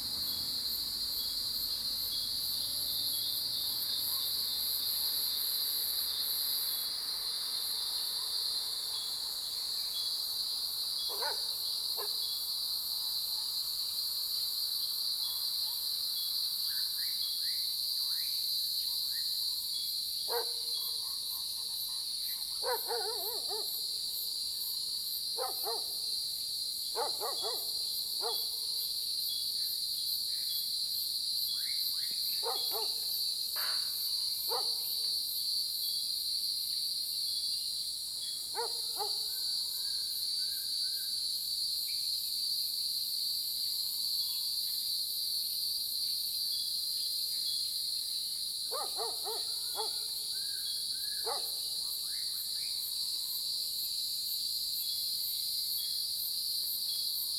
草楠, 埔里鎮桃米里, Nantou County - Early morning
Early morning, Cicadas sound, Bird sounds, Dogs barking
Zoom H2n